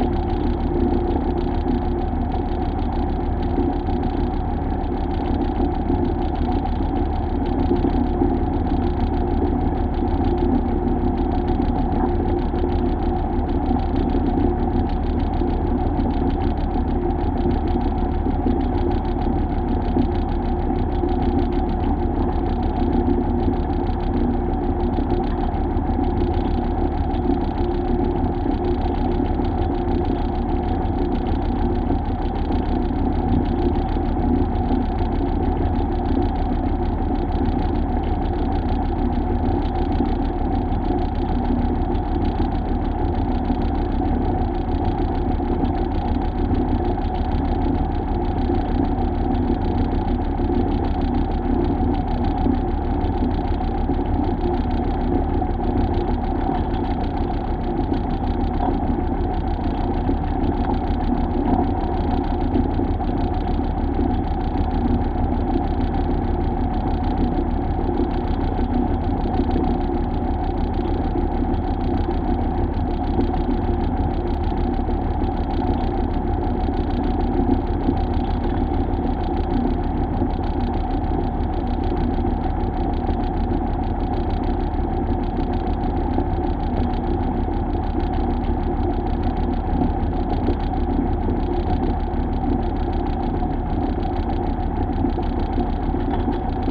Two JrF contact microphones (c-series) to a Tascam DR-680.